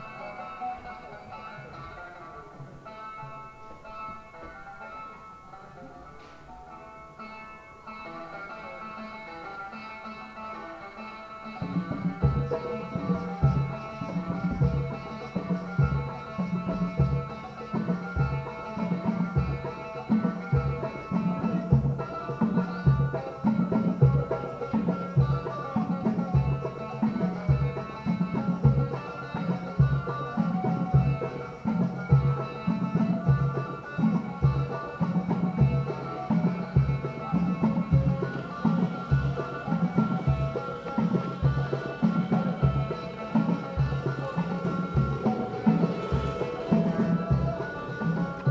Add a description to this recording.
Distant Berber music band and night atmosphere (dogs on the right). Click on mic at 7m45, Groupe de musique berbère, lointain. Ambiance de nuit (chiens sur la droite). “Click” sur le micro à 7m45